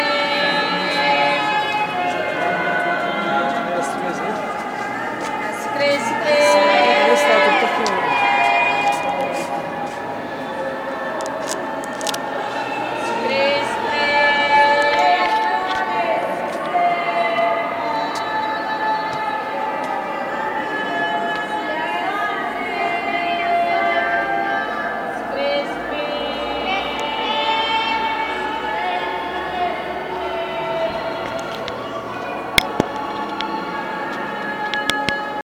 Terminal de autobuses, Oruro, Bolivia.
Canticos con los horarios y destinos de los autobuses de linea.